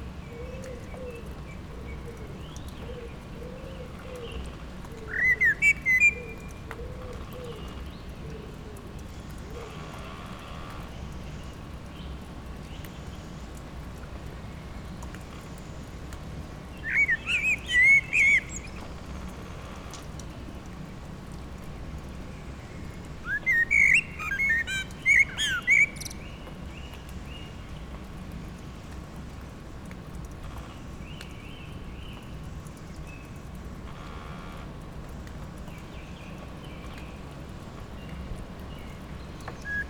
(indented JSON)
{
  "title": "Dartington, Devon, UK - soundcamp2015dartington blackbird on hall in rain",
  "date": "2015-05-02 18:32:00",
  "latitude": "50.45",
  "longitude": "-3.69",
  "altitude": "55",
  "timezone": "Europe/London"
}